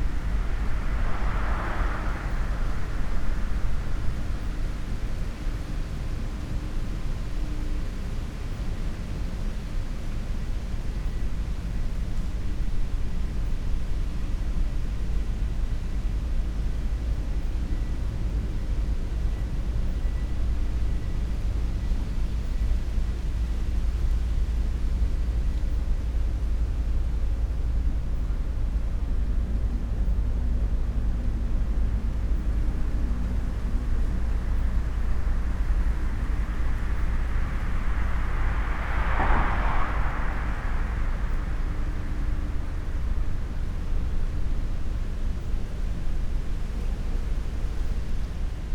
Via Bellosguardo, Trieste, Italy - nocturnal sonic scape